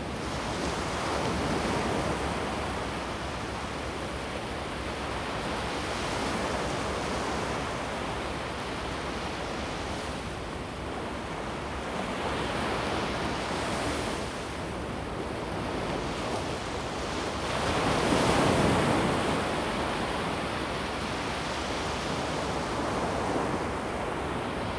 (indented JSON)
{
  "title": "西子灣海水浴場, Kaohsiung County - Beach",
  "date": "2016-11-22 14:48:00",
  "description": "Sound of the waves, Beach\nZoom H2n MS+XY",
  "latitude": "22.62",
  "longitude": "120.26",
  "altitude": "1",
  "timezone": "Asia/Taipei"
}